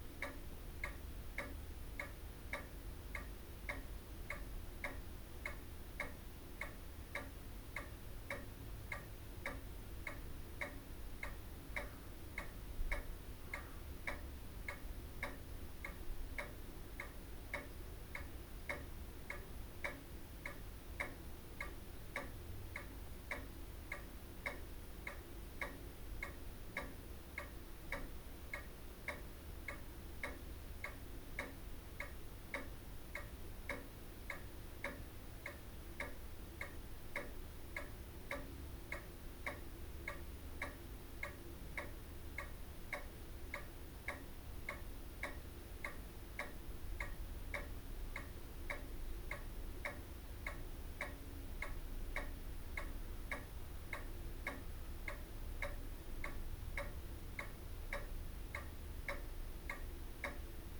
Meadow Way, Didcot, UK - front room ambience ...
front room ambience ... recorded with olympus ls 14 integral mics ... a pendulum wall clock ticks on ... the heartbeat and background to family life over many years ... dad passed away with a covid related illness in dec 2020 ... he was 96 ... registered blind and had vascular dementia ... no sadness ... he loved and was loved in return ... heres to babs and jack ... bless you folks ... my last visit to the house ...